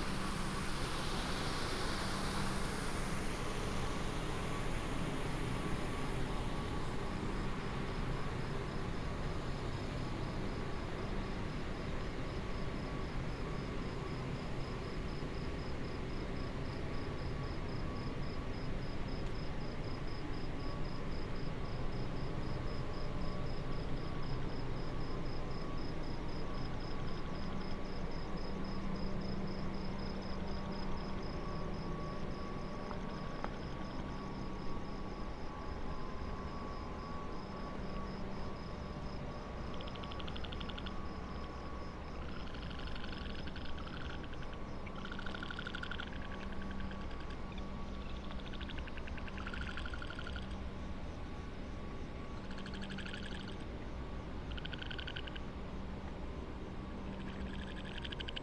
varanasi: by the ghats - ghat walk during black out
a night walk along the ghats during a black out - the frogs kept me company, some sadus by their fires and fire works... march 2008